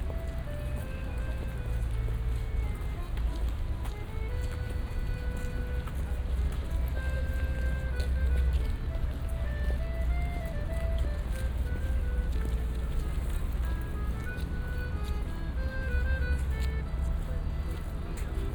Berlin - Museumsinsel - crossing bridge
seeing as many sites as possible on my day and a half trip to Berlin... next destination Hackescher Markt...